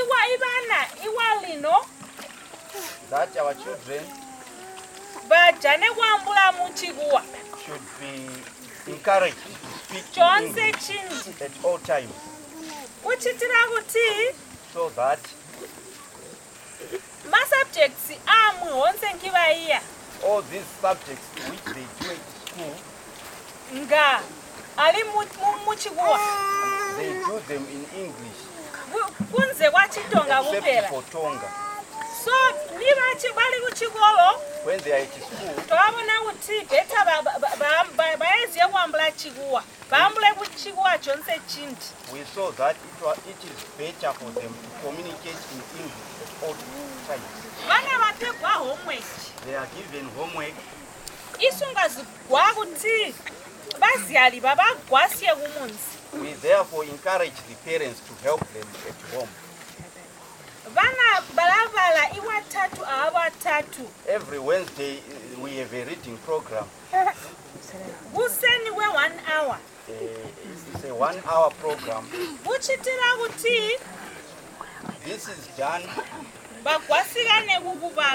Damba Primary School, Binga, Zimbabwe - English, ChiTonga and water for the spirits...
…we are witnessing an award ceremony at Damba Primary School, a village in the bushland near Manjolo… ...the head mistress of the school speaks about the teaching, and especially on the all over use of the English language in all subject, except for ChiTonga… during her speech a women from the village begins walking around splashing water on the ground… (later I learn that this is a ritual appeasing the ancestral spirits…)
November 2012